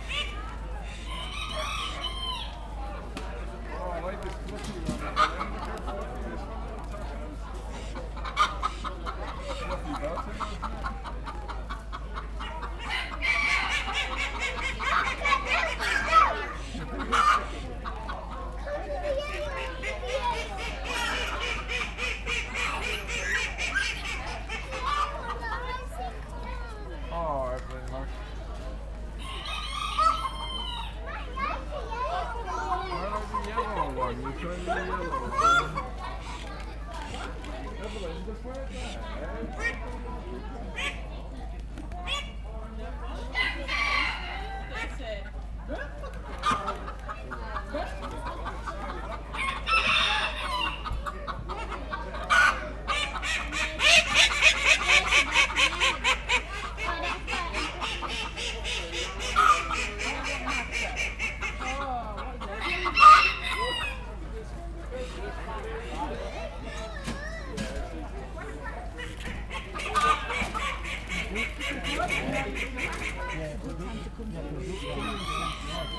Heygate Bank, Pickering, UK - Rosedale Show ... the fur and feather tent ...
Inside the fur and feather tent ... open lavaliers clipped to baseball cap ... background noise from voices ... creaking marquee ... and the ducks ... chickens and other animals present ...
19 August 2017